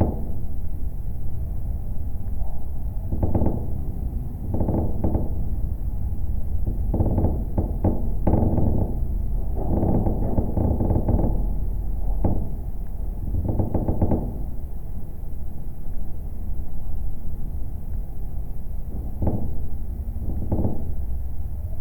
Working metallic watertower. Recorded with a pair of contact mics and geophone.